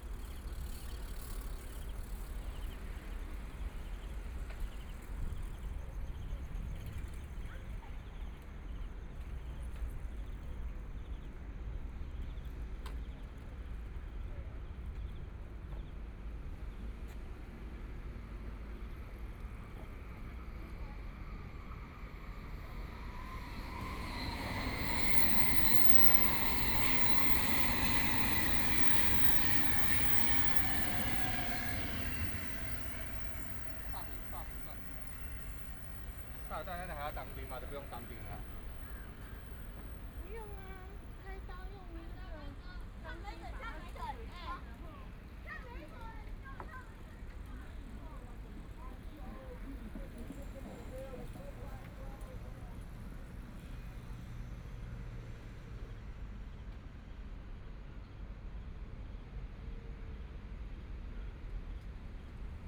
淡水區竿蓁里, New Taipei City - soundwalk
Walking along the track beside the MRT, Take a walk, Bicycle voice, MRT trains
Please turn up the volume a little. Binaural recordings, Sony PCM D100+ Soundman OKM II
New Taipei City, Taiwan, 5 April